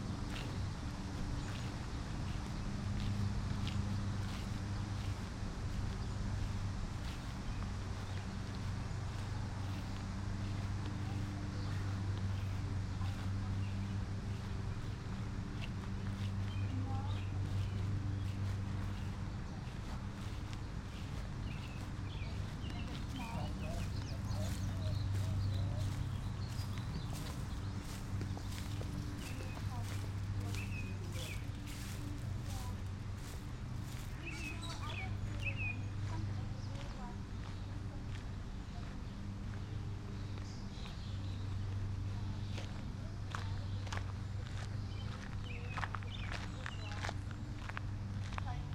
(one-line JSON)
{"title": "Northville, MI, USA - Mill Race Village", "date": "2012-05-27 11:19:00", "description": "A morning stroll through the old village.", "latitude": "42.43", "longitude": "-83.48", "altitude": "246", "timezone": "America/Detroit"}